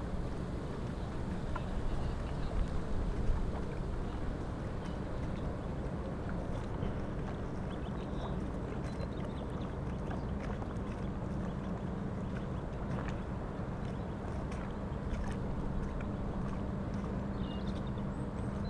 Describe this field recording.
place revisited at spring break, a rather cold and windy day. (SD702, Audio Technica BP4025)